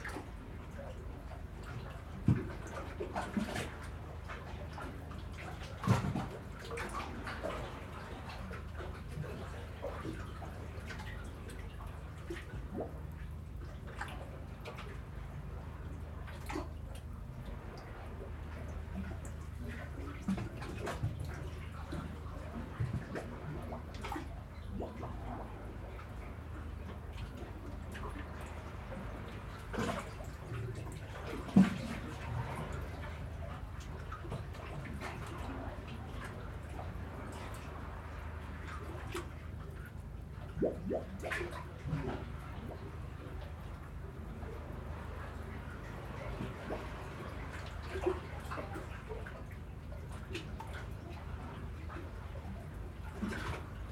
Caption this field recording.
This recording was made through a gap in the rocks, where the hollow spaces in between the rocks colour the sound. (zoom H4n internal mics)